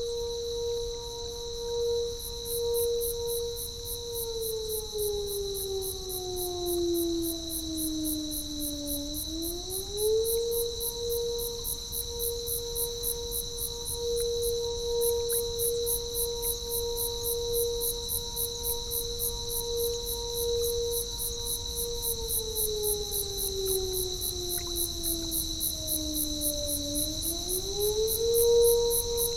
Midnight on Turkey Point. Salt marsh. The trickling sound is hundreds of small fish jumping from the marsh stream's surface. An unheard Perseid meteor streaked overhead.